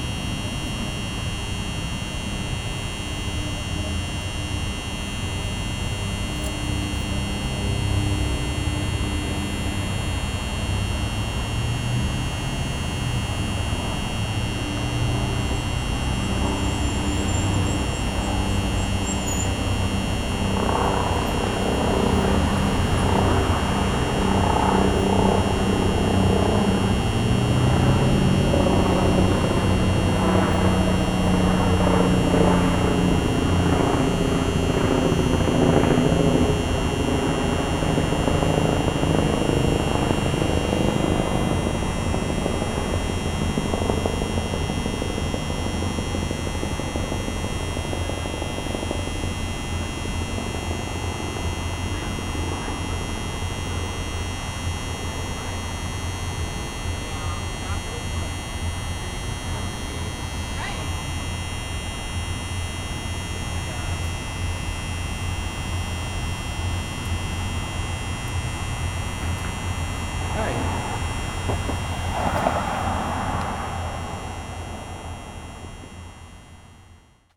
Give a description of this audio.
The sound of a buzzing lamp post, as well as traffic and a helicopter flying overhead. Recorded with the onboard Zoom H4n Microphones